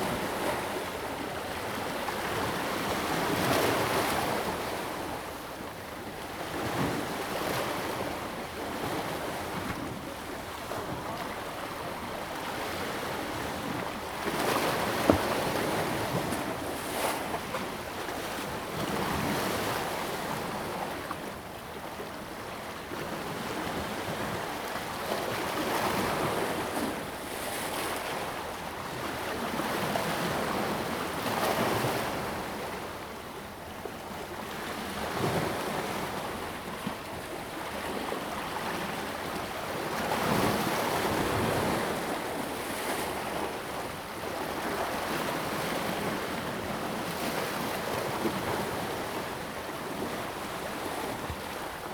六塊厝漁港, New Taipei City, Taiwan - Waves lapping the pier
the waves, Small fishing pier, Waves lapping the pier
Zoom H2n MS+XY